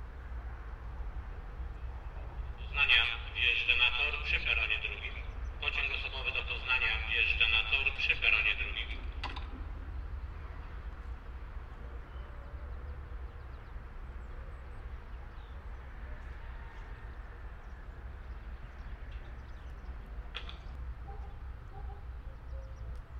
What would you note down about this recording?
small diesel train arriving at the platform in Skoki